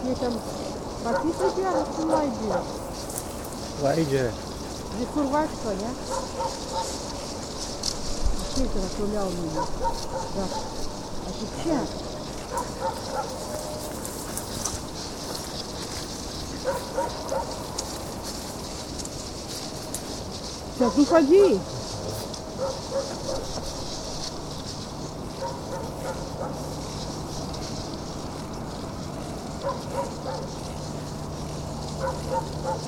Utena district municipality, Lithuania - ice